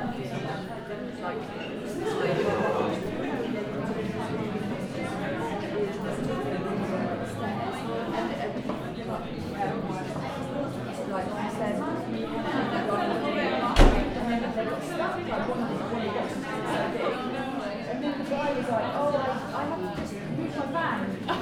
neoscenes: Field Gallery opening
Jodi meets Kim.
Berlin, Germany, 1 June 2008